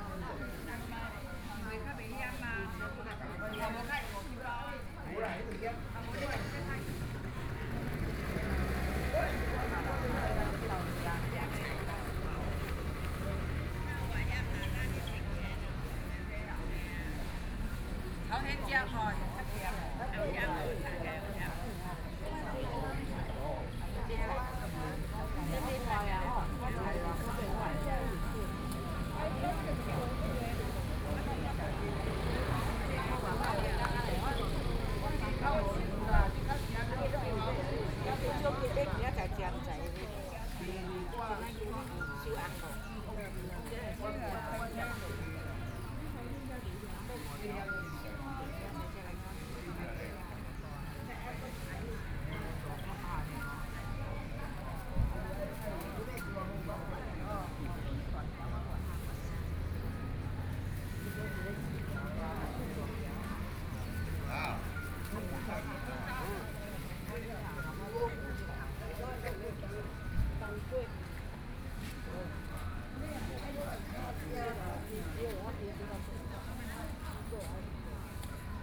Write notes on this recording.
Traffic Sound, Birdsong, Morning elderly and greengrocer